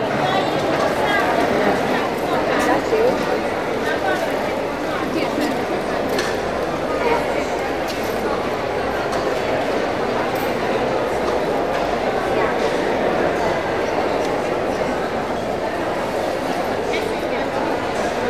budapest, markethall, indoor atmo
inside a large markethall, fruits and vegetables - steps and conversations in the morning time
international city scapes and social ambiences